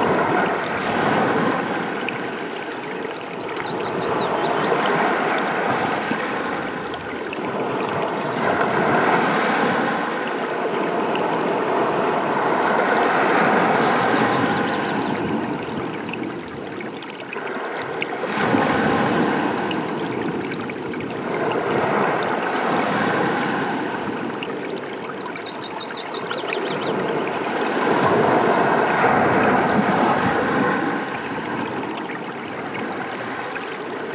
stream on the gravel of the current in the sea, White Sea, Russia - stream on the gravel of the current in the sea
Stream on the gravel of the current in the sea.
Ручей по гальке текущий в море, в лесу поет птица.